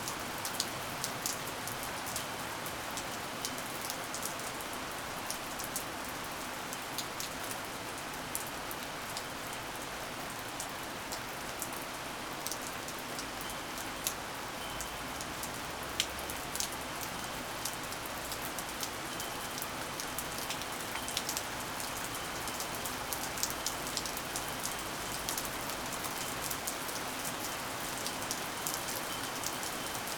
rain bouncing of the balcony railing adding subtle bell sounds to the rainstorm noise.
Poznan, Poland, 9 June